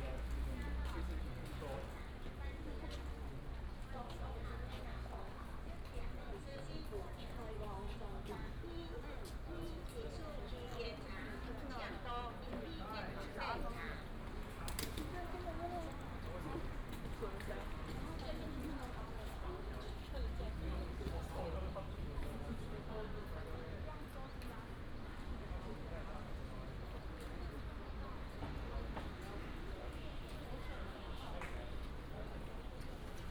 Houlong Station, 苗栗縣後龍鎮 - walking in the Station
walking in the Station